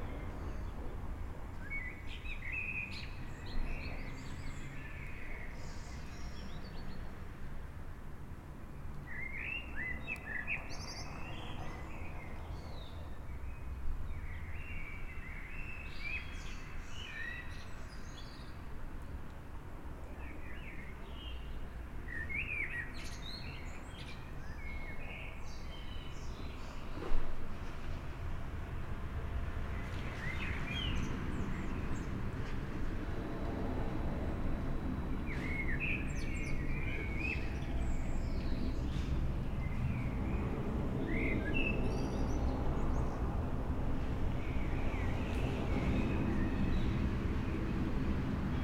Wiewiórcza, Gdańsk, Poland - landing plane flyover, birdsong
LOM Mikrousi mounted binaurally, into a Tascam DR-100 mk3. An airliner jet landing at the nearby Lech Walesa Airport (GDN).